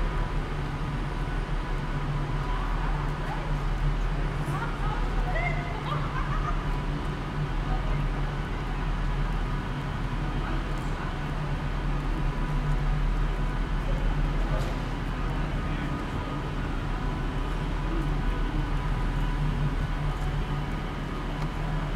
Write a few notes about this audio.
Recording from within Carlisle train station. Loudspeaker announcements, train engines and people talking. Recorded with members of Prism Arts.